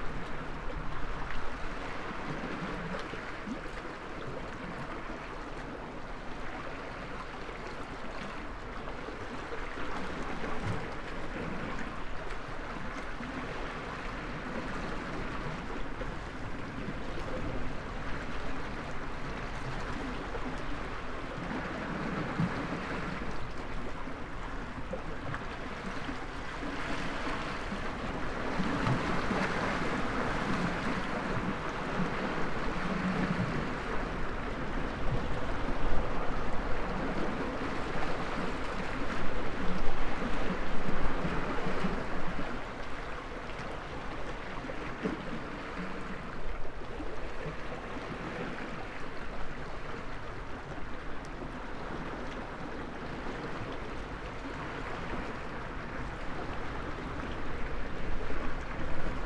Pink Floyd Bay, Notia Rodos, Griechenland - Waves on the rocks at Pink Floyd Bay, Rhodos
Waves on the rocky shore. The bay is called Pink Floyd Bay by the locals. Some said it is because of the bizarre rock formations that resemble a picture on one of the bands Record sleeves, other rumours say that the band actually had some jam sessions on the beach. While probably none of this is true, the place still ist surely beautiful.Binaural recording. Artificial head microphone set up in the windshade of a rockstack about 5 Meters away from the waterline. Microphone facing east.Recorded with a Sound Devices 702 field recorder and a modified Crown - SASS setup incorporating two Sennheiser mkh 20 microphones.
24 October, 12:00